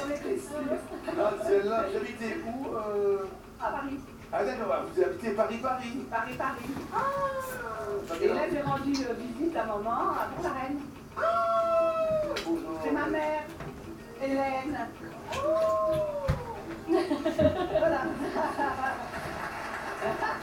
Maintenon, France - Lunch time
In the kitchen of two old persons. They are eating their lunch. Sometimes there's some long ponderous silences. I made no changes to this recording.
1 January 2016, 12:10